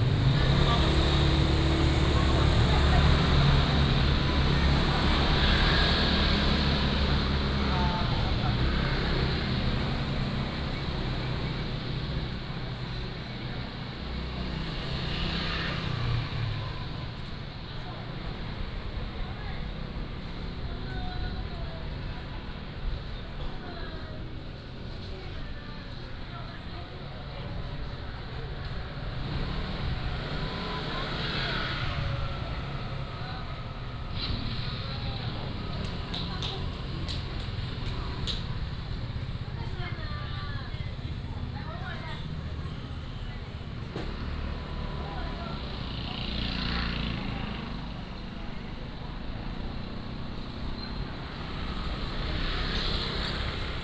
{
  "title": "Zhongshan Rd., Hsiao Liouciou Island - Walking on the road",
  "date": "2014-11-01 17:37:00",
  "description": "Walking on the road",
  "latitude": "22.35",
  "longitude": "120.38",
  "altitude": "12",
  "timezone": "Asia/Taipei"
}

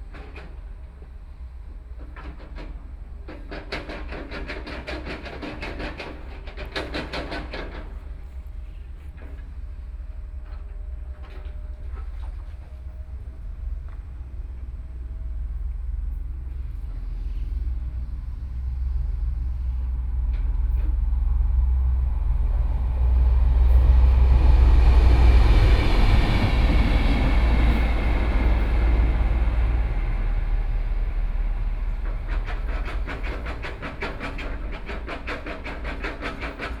{
  "title": "羅東林業文化園區, Yilan County - Beside the railway tracks",
  "date": "2014-07-28 10:25:00",
  "description": "in the Park, the construction site noise, Trains traveling through",
  "latitude": "24.69",
  "longitude": "121.77",
  "altitude": "9",
  "timezone": "Asia/Taipei"
}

